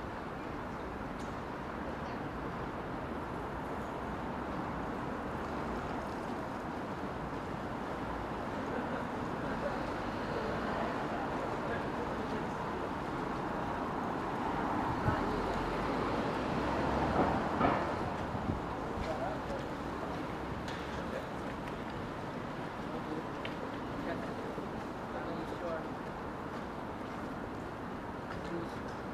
Near the cafe TAMANNO (12 st4, Ordzhonikidze street). I sat on a bench and listened to what was happening around me. Frosty winter day, January 27, 2020. Recorded on a voice recorder.